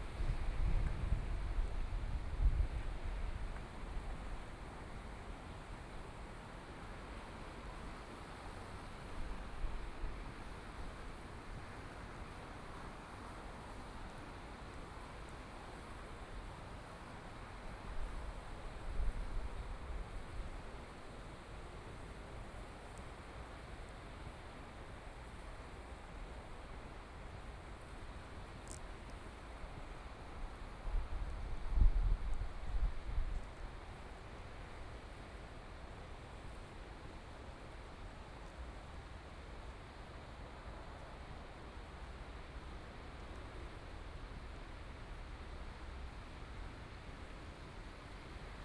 Guanxi Township, Hsinchu County - The sound of water
Walking along the river side, Walking from upstream to downstream direction, Binaural recording, Zoom H6+ Soundman OKM II
Guanxi Township, Hsinchu County, Taiwan, December 2013